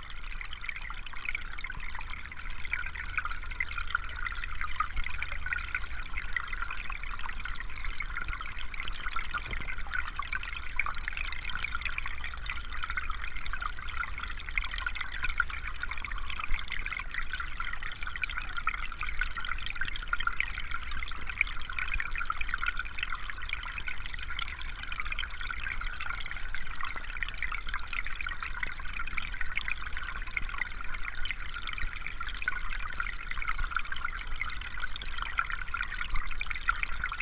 hydrophones in the stream in local moor